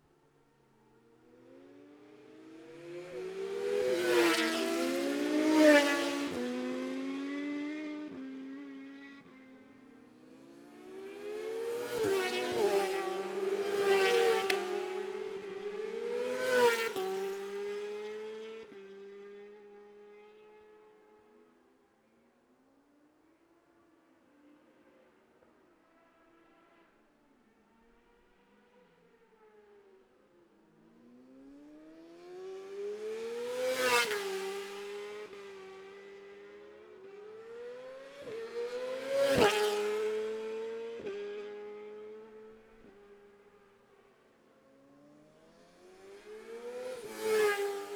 Jacksons Ln, Scarborough, UK - Gold Cup 2020 ...
Gold Cup 2020 ... 600 odds practice ... dpa bag MixPre3 ...
September 2020